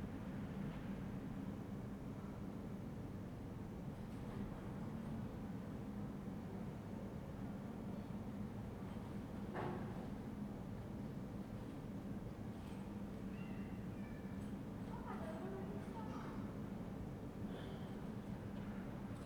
Ascolto il tuo cuore, città. I listen to your heart, city. Several chapters **SCROLL DOWN FOR ALL RECORDINGS** - Terrace at sunset in the time of COVID19, one year after Soundscape
"Terrace at sunset in the time of COVID19, one year after" Soundscape
Chapter CLXII of Ascolto il tuo cuore, città. I listen to your heart, city
Wednesday, March 17th 202I. Fixed position on an internal terrace at San Salvario district Turin, 1 year and 1 week after first lockdown due to the epidemic of COVID19.
Start at 6:45 p.m. end at 7:13 p.m. duration of recording 27'45''
Torino, Piemonte, Italia, 17 March 2021